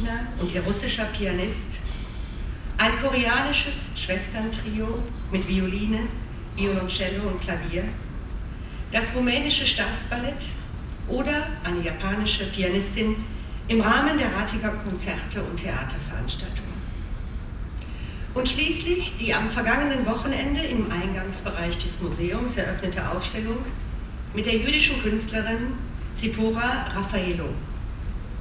ratingen, grabenstrasse, stadtmuseum - ratingen, grabenstrasse, stadtmuseum, eröffnung
kurzausschnitt einer eröffnungsrede der ratinger bürgermeisterin
- soundmap nrw
project: social ambiences/ listen to the people - in & outdoor nearfield recordings